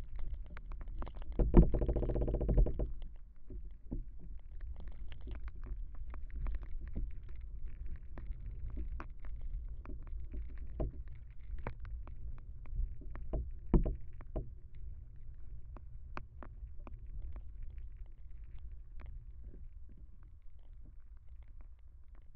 Utena, Lithuania, creaking winter tree
contact microphone on creaking tree...it is getting cold again...